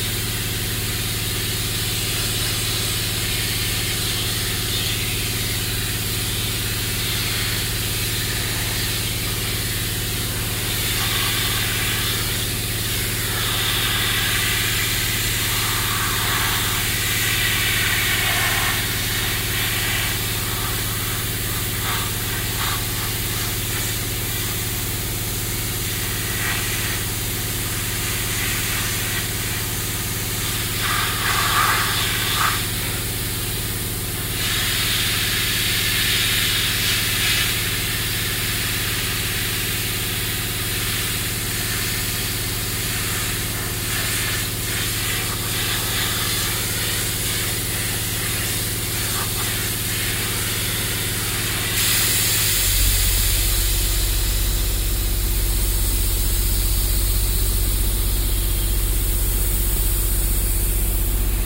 cologne, south, friedenspark, anti sprayer aktion - cologne, sued, friedenspark, anti sprayer aktion
wasserdruckanlage und generatorgeräusche bei der entfernung von graffitis an der burgmauer
soundmap: cologne/nrw
project: social ambiences/ listen to the people - in & outdoor nearfield recordings